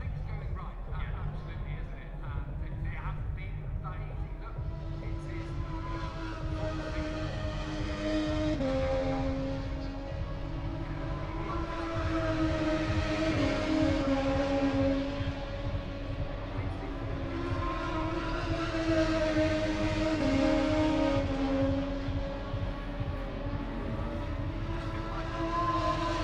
british motorcycle grand prix 2022 ... moto two free practice two ... inside maggotts ... dpa 4060s clipped to bag to zoom h5 ...